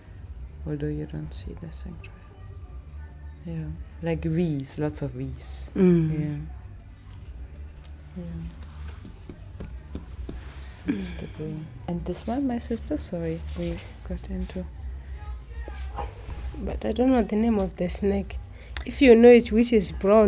Binga Craft Centre, Binga, Zimbabwe - Barbara Mudimba - Weaving patterns...
Barbara and Viola talk about the traditional patterns used in the weaving and some of their meanings, like the bream (the fish bone), the water (the Zambezi), the lightning or the hut (tracks 06 and 07). Earlier (track 2), they explained that the patterns were used in the traditional BaTonga beadwork and were then transferred in to weaving designs. The Ilala-grass, which is used in basket-weaving is an indigenous natural resource, while beads would need to be purchased.
The entire recording with Barbara is archived at: